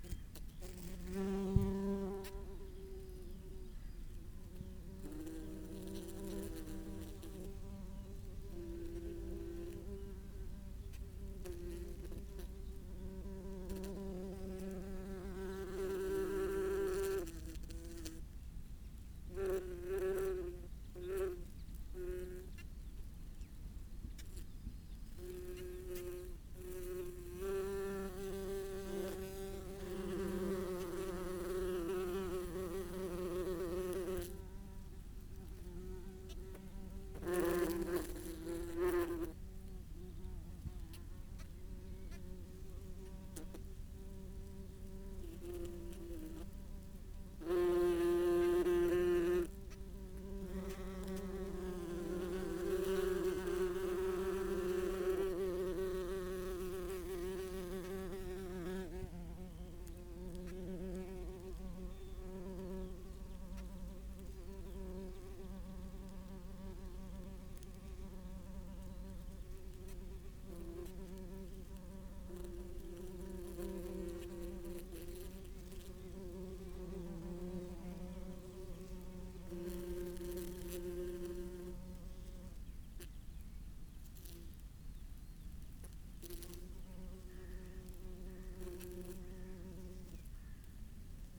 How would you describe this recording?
Open bees nest ... bees nest had been opened ... by a badger ..? the nest chamber was some 15cm down ... placed my parabolic at the edge of the hole and kept as far back as the cable would allow ..! no idea of the bee species ... medium size bumble bees with a white rump ... obviously not the solitary type ... background noise ...